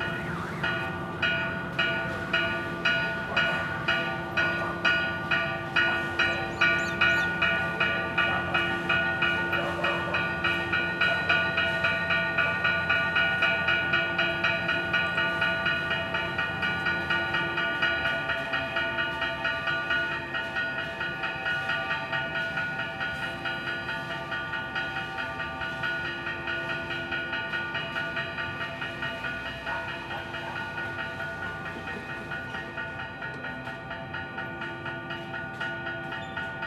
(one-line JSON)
{"title": "Ayaviri, Puno, Perú Catedral Bells", "date": "2009-01-26 07:49:00", "description": "SOundscape bye ACM", "latitude": "-14.88", "longitude": "-70.59", "altitude": "3918", "timezone": "America/Lima"}